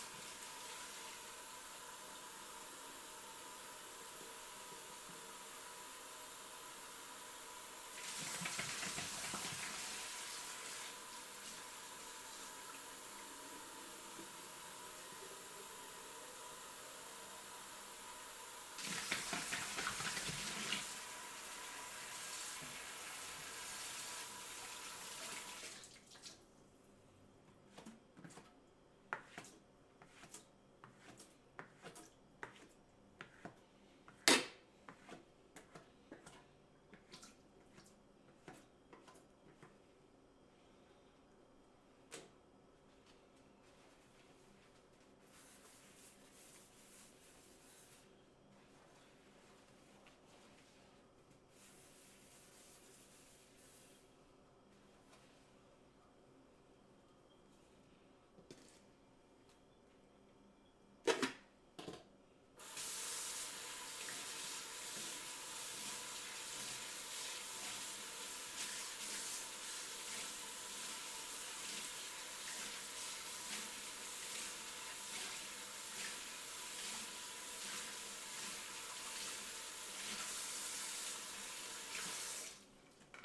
Av. Samuel Martins - Vila Arens II, Jundiaí - SP, 13202-251, Brasil - Dish washing at home
Dish washing on a sunday afternoon, quietly and alone at home. Water sound, sink sound, plates, silverware being handled and scrubbed, humming of the refrigerator, a little bit of footsteps towards the end. Recorded with Zoom H5 XYH-5 capsules.